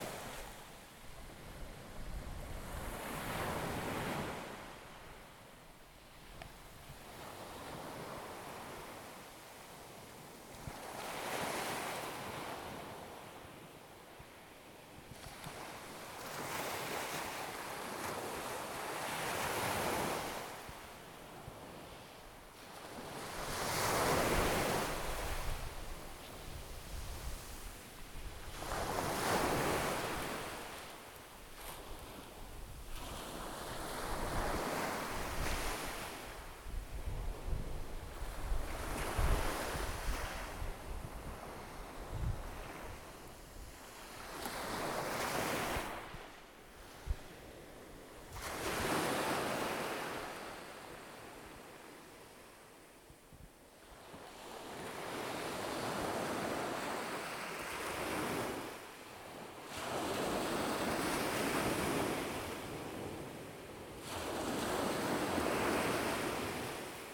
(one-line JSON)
{"title": "Agiofaraggo Canyon Footpath, Festos, Greece - Waves on pebbles in Agiofarago", "date": "2017-08-16 22:49:00", "description": "The interaction of the water with the pebbles has been captured in this recording.", "latitude": "34.93", "longitude": "24.78", "altitude": "12", "timezone": "Europe/Athens"}